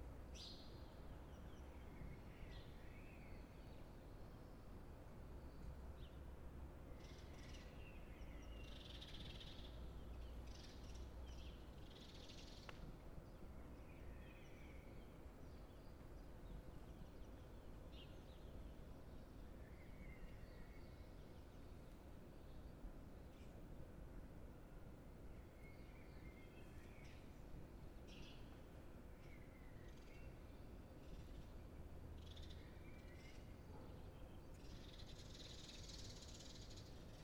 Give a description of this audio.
La Rochelle Saturday morning pré-deconfinement? it runs this morning at 7 a.m. 4 x DPA 4022 dans 2 x CINELA COSI & rycote ORTF . Mix 2000 AETA . edirol R4pro